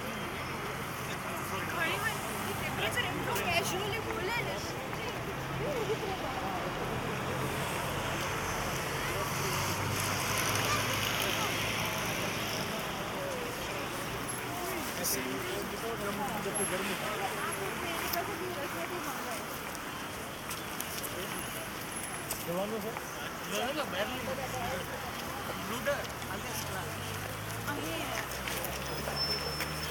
Potsdamer Platz, Berlin, Allemagne - Weihnachtsmarkt
Walking Postdamer Platz and visiting Christmas market with music and children gliding artificial slope on inner tubes (Roland R-07 + CS-10EM)